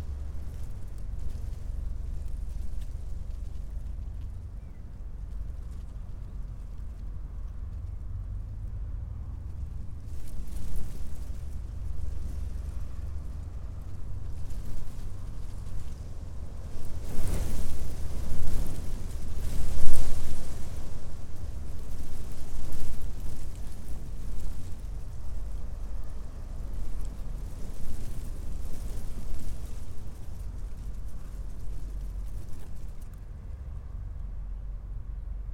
Rue de l'Arnière, Orgerus, France - Wheat field still green, growing about 20 centimeters in April
The wind came from the west-south west.
The fields are still green and fresh.